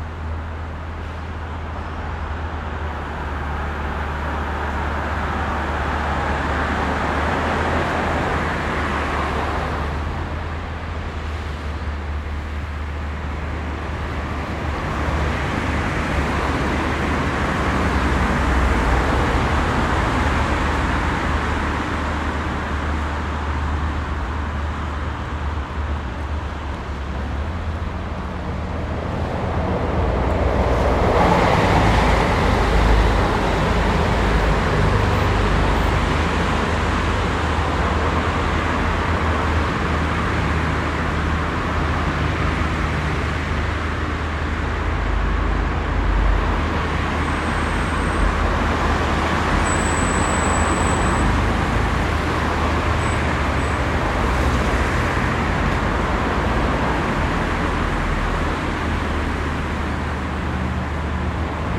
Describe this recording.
Recording of the s Gravendijkwal´s tunnel. Cars of different types and sizes. Recorded with zoom H8